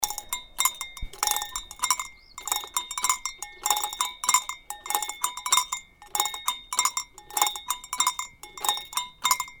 hoscheid, sound object, musikalische Zaungäste
another recording of the same sound object
Projekt - Klangraum Our - topographic field recordings, sound art objects and social ambiences